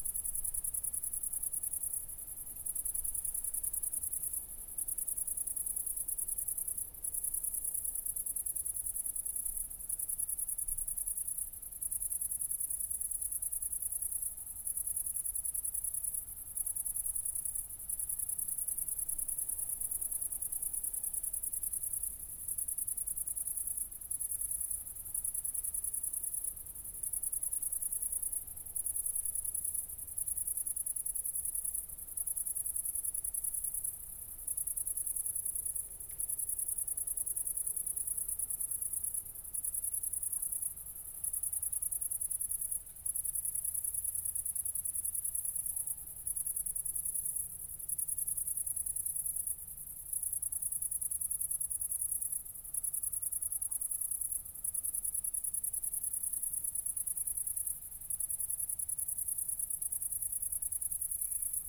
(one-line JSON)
{"title": "brandenburg/havel, kirchmöser, nordring: garden - the city, the country & me: crickets", "date": "2014-08-04 00:53:00", "description": "crickets, upcoming wind, frogs in the distance\nthe city, the country & me: august 4, 2014", "latitude": "52.39", "longitude": "12.44", "altitude": "29", "timezone": "Europe/Berlin"}